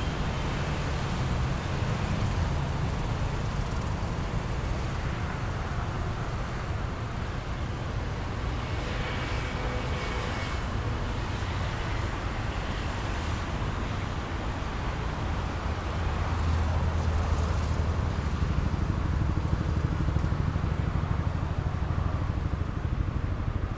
En este paisaje se escucha el tráfico denso de la avenida el Poblado